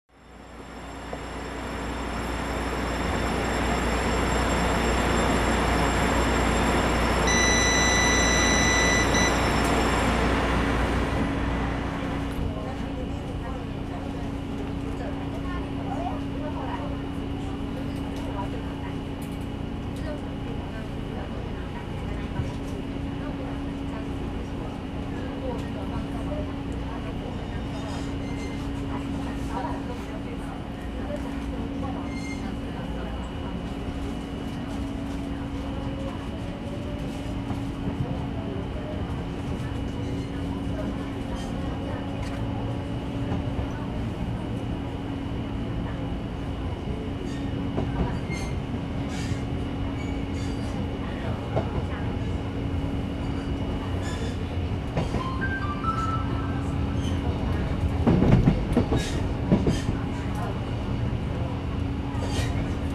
Fengshan, kaohsiung - inside the Trains
inside the Trains, Sony Hi-MD MZ-RH1, Rode NT4